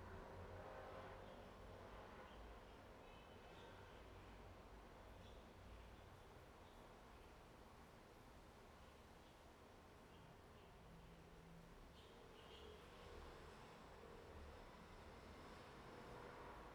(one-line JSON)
{"title": "仁德二路, Bade Dist., Taoyuan City - test fire alarm system", "date": "2017-09-01 12:31:00", "description": "Check and test fire alarm system, Zoom H2n", "latitude": "24.94", "longitude": "121.29", "altitude": "140", "timezone": "Asia/Taipei"}